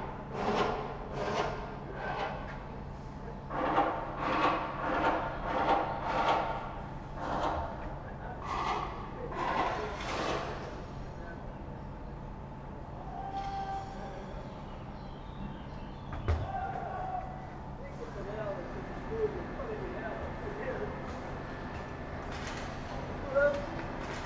Lisbon, Travessa do Forno do Torel - street ambience
noon break is over, nearby contruction work starts, echoes of tools and voices, street and station ambience
Lisbon, Portugal, 1 July